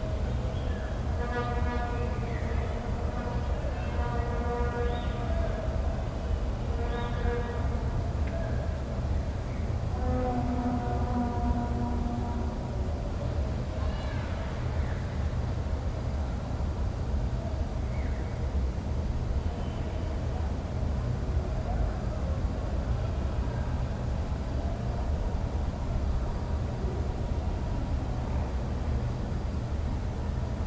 Listening to the city from the 16th floor of Anstey’s building, Saturday night…
from the playlist: Seven City Soundscapes: